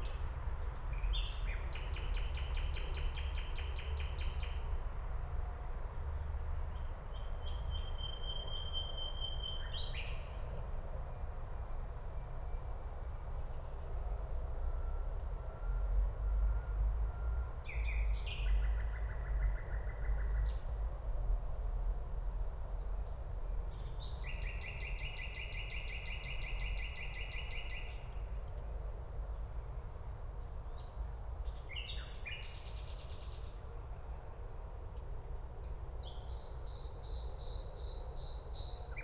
Schloßpark Buch, Berlin, Deutschland - park ambience /w Nightingale and distant traffic noise
park ambience with a quite elaborated nightingale, distant traffic noise
(Sony PCM D50, DPA4060)